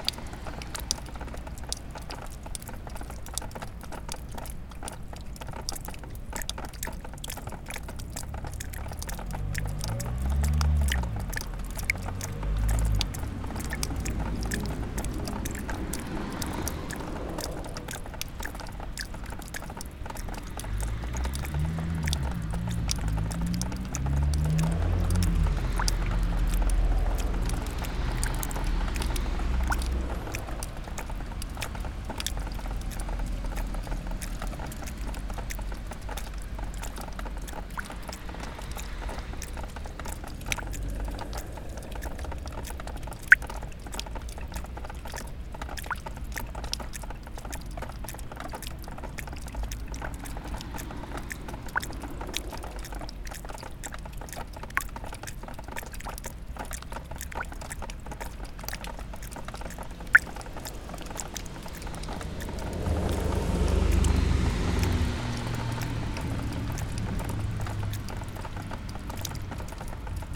M. Valančiaus g., Kaunas, Lithuania - Melting ice dripping
Melting ice dripping from a windowsill of a semi-abandoned industrial building. Recorded with ZOOM H5.